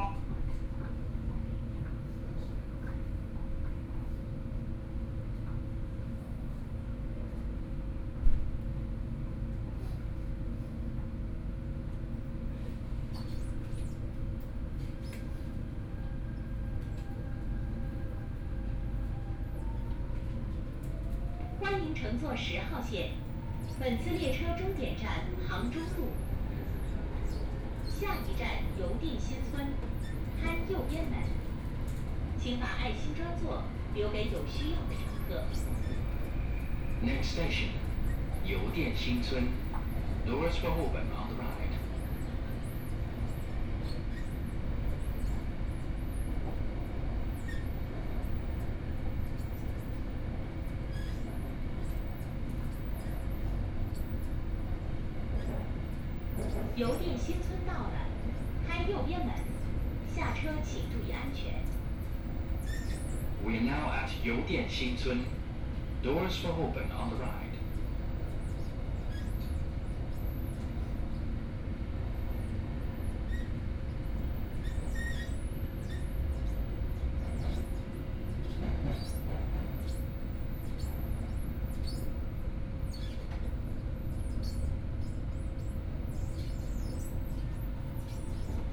Shanghai, China - Line10 (Shanghai Metro)
Line10 (Shanghai Metro), from Wujiaochang Station to North Sichuan Road station, Binaural recording, Zoom H6+ Soundman OKM II
21 November 2013